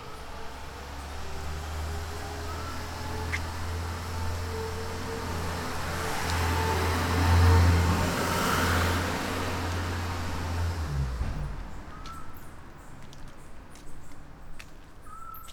night sonic scape with small owl, crickets, bats, cars
Trieste, Italy, 7 September 2013